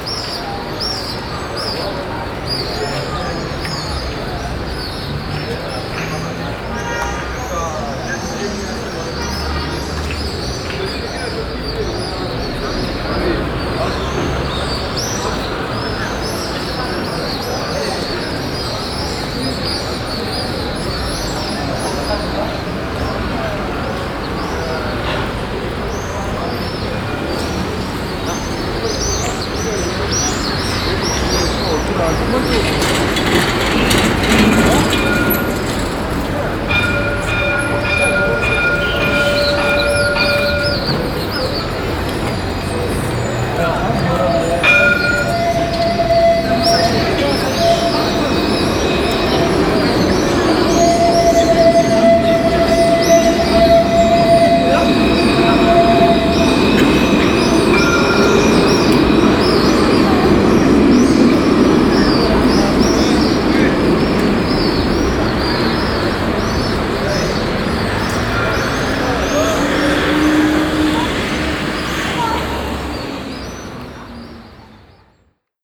Ville Nouvelle, Tunis, Tunesien - tunis, avenue de carthage, traffic and eurasian swifts
Standing on the corner of the street in the evening. Passing by some trams and other traffic - all over in the air the sound of the birds flying low shortly before an upcoming thunderstorm.
international city scapes - social ambiences and topographic field recordings